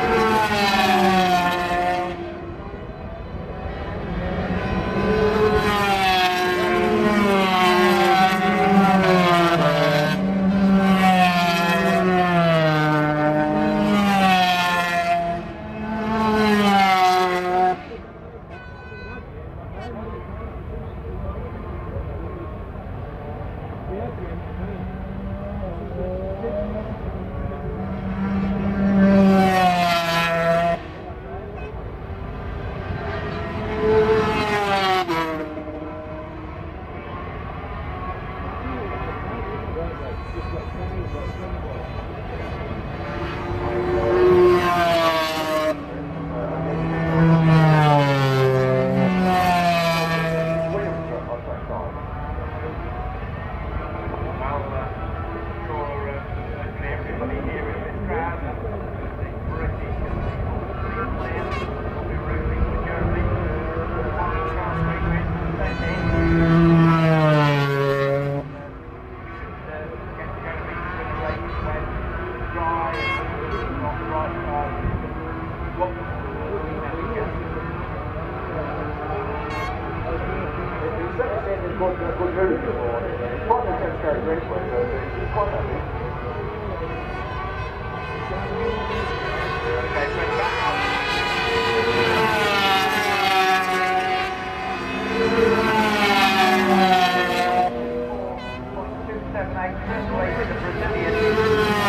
500cc warm up ... Starkeys ... Donington Park ... warm up plus all associated noise ... Sony ECM 959 one point stereo mic to Sony Minidisk ...